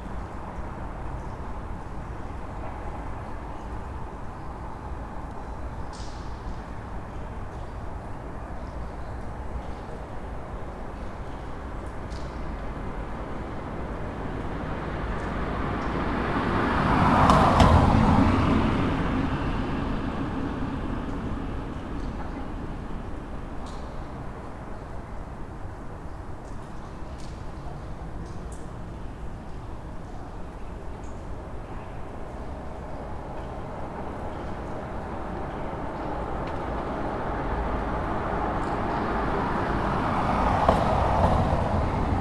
Šiaulių g., Kaunas, Lithuania - Near unfinished construction site
Recording near an unfinished building. Water dripping and general ambience of the construction site is heard together with distant traffic and cars passing by. Recorded with ZOOM H5.